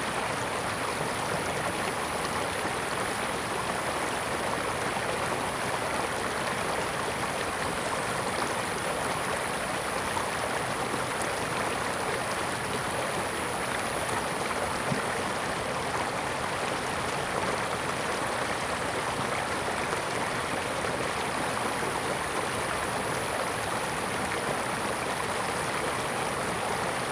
Bird sounds, In the middle of the river, Sound of water
Zoom H2n MS+XY